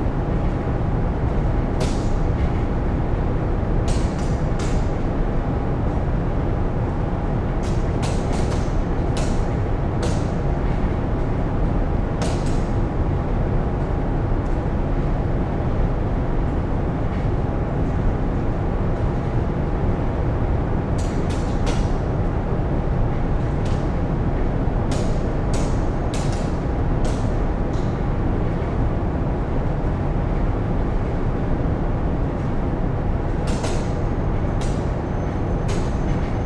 {"title": "Oberkassel, Düsseldorf, Deutschland - Düsseldorf, Opera rehearsal stage, studio 3", "date": "2012-12-15 15:30:00", "description": "Inside the rehearsal building of the Deutsche Oper am Rhein, at studio 3.\nThe sound of the room ventilation plus\nThe sound of the room ventilation with accent sounds from the roof construction as water bladders unregular on the top.\nThis recording is part of the intermedia sound art exhibition project - sonic states\nsoundmap nrw -topographic field recordings, social ambiences and art places", "latitude": "51.24", "longitude": "6.74", "altitude": "40", "timezone": "Europe/Berlin"}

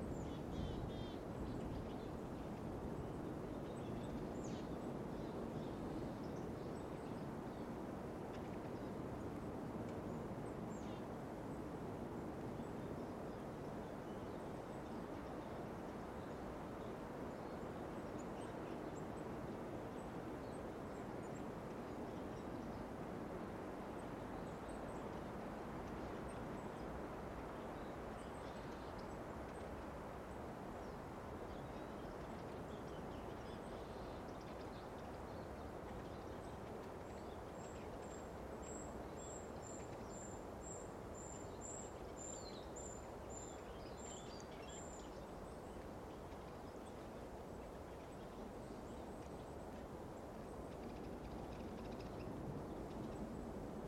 {"title": "Тропа к Чертову стулу, Респ. Карелия, Россия - In the forest on the shore of lake Onega", "date": "2020-02-14 13:52:00", "description": "In the forest on the shore of lake Onega. You can hear the birds singing, the forest is noisy. Day. Warm winter.", "latitude": "61.84", "longitude": "34.39", "altitude": "23", "timezone": "Europe/Moscow"}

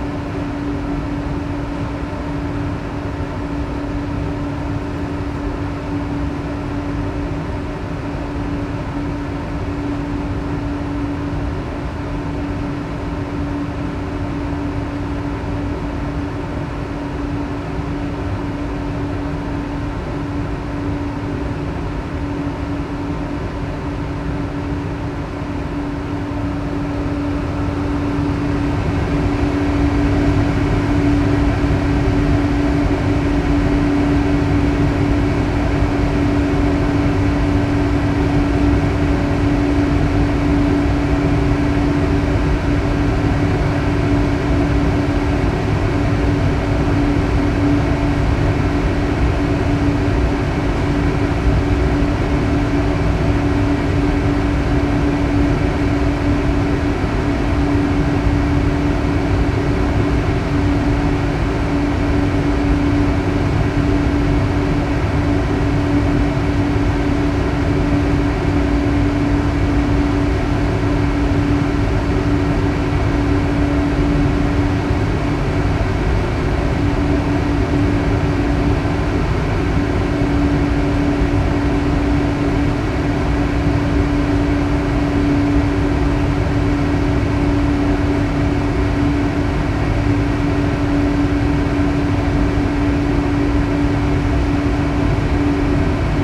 {"title": "Colombarium ventilation 1a", "date": "2010-11-01 14:47:00", "description": "Fête des Morts\nCimetière du Père Lachaise - Paris\nVentilation, grille murale", "latitude": "48.86", "longitude": "2.40", "altitude": "96", "timezone": "Europe/Paris"}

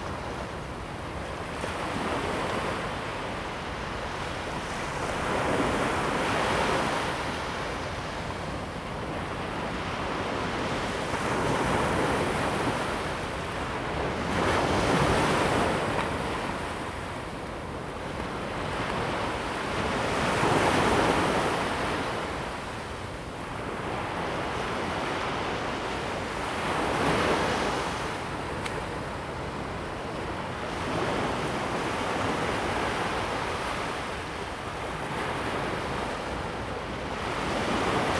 {"title": "淡水, New Taipei City - the waves", "date": "2017-01-05 16:11:00", "description": "On the beach, Sound of the waves\nZoom H2n MS+XY", "latitude": "25.19", "longitude": "121.41", "timezone": "GMT+1"}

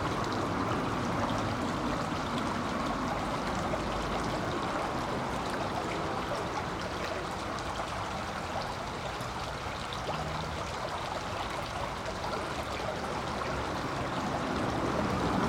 {"title": "Bd de la Sereine, Montluel, France - The Sereine river under the bridge", "date": "2022-07-22 17:10:00", "description": "The river, cars passing by, a train.\nTech Note : Sony PCM-M10 internal microphones.", "latitude": "45.85", "longitude": "5.05", "altitude": "197", "timezone": "Europe/Paris"}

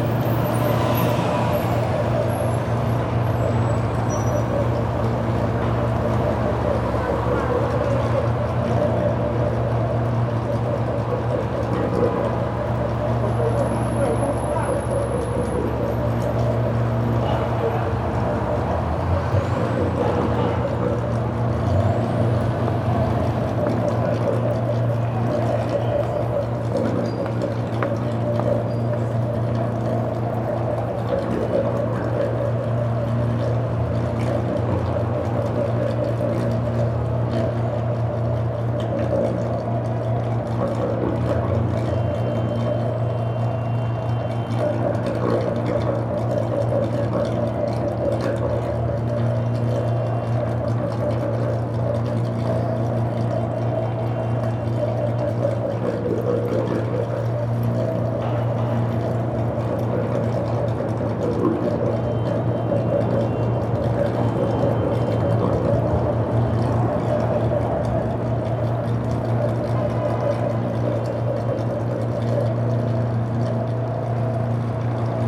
{"title": "curious underground pump in front of Pittsfield Building", "date": "2012-01-11 17:16:00", "description": "Pump, gurgling, trains, underground, sidewalk level, Pittsfield Building, Chicago", "latitude": "41.88", "longitude": "-87.63", "altitude": "181", "timezone": "America/Chicago"}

